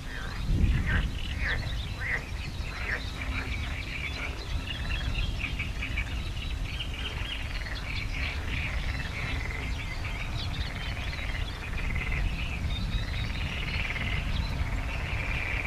hohenau, habitat
habitat at the ponds of the former surgar factory of hohenau, in the background the road from the slovak border
Niederösterreich, Österreich, European Union, 2 July, ~14:00